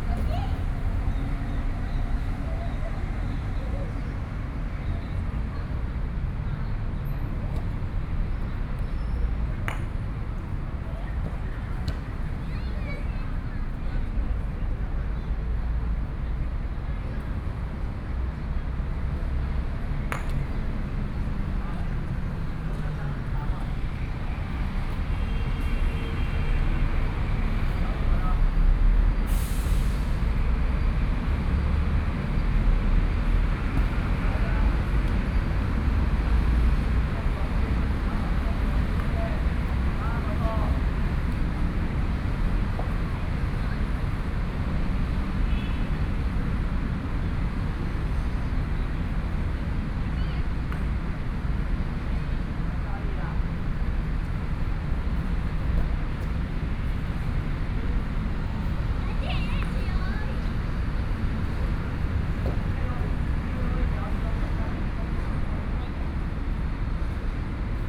Central Park, Hsinchu City - Evening in the park
Old man playing croquet, Sony PCM D50 + Soundman OKM II